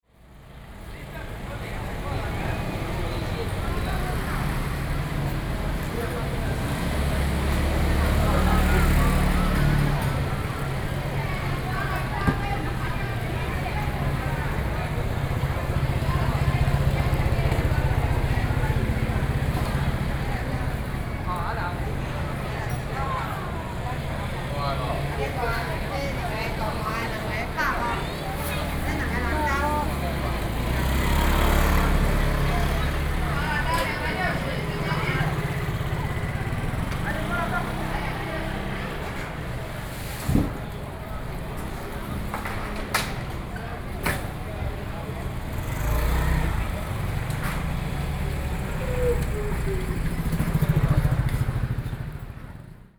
Zhōngzhèng Rd, Ruifang District, New Taipei City - Vegetable market